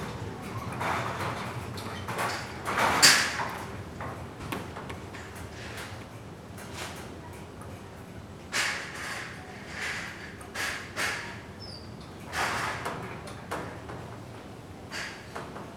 sound nature of a scraggy scaffolding, a few stories high. on top of it a makeshift roof made of random wooden and random boards. due to strong wind the whole roof bounces, rattles and bangs against the pipes of the scaffolding. also sounds of a nearby restaurant.
Lisbon, Portugal, 26 September 2013